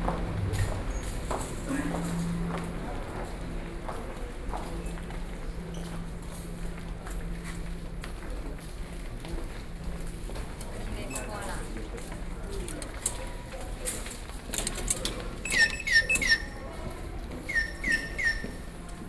汐止火車站, New Taipei City - At the train station
New Taipei City, Taiwan, 4 November 2012